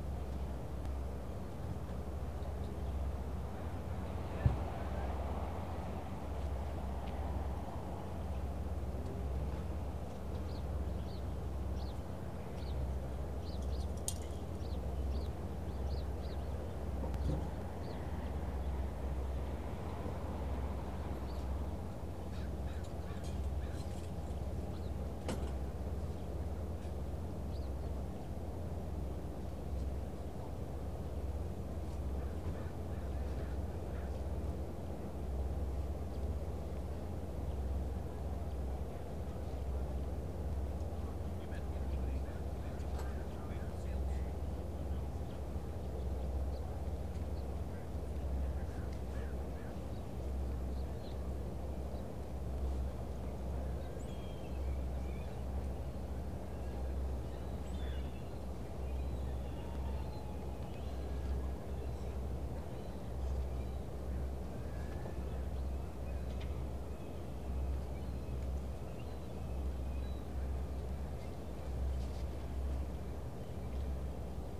Edmonds Waterfront
A typical bustling weekend morning at this popular waterfront park north of Seattle. A constant stream of characters come and go while birds fight over their leftovers.
This was the start of a series (Anode Urban Soundscape Series) of phonographic recordings, made with my new Sony MZ-R30 digital MiniDisc recorder, and dubbed to Compact Disc.
Major elements:
* SCUBA divers preparing to dive on Edmonds' underwater park
* Cars and trucks (mostly old) coming in, parking, and leaving
* Two ferries docking in the distance and unloading
* Construction work underway on the new ferrydock
* Seagulls, pigeons and crows
* A bicyclist coasting through
* A man walking past with an aluminum cane
Washington, United States of America, October 1998